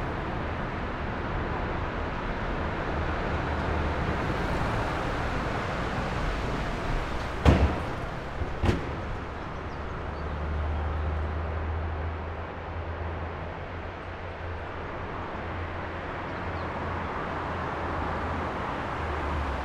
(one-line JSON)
{"title": "Perugia, Italia - the mouth of the Kennedy tunnel", "date": "2014-05-22 15:25:00", "description": "same spot of the previous recording the day after with different mics", "latitude": "43.11", "longitude": "12.39", "altitude": "446", "timezone": "Europe/Rome"}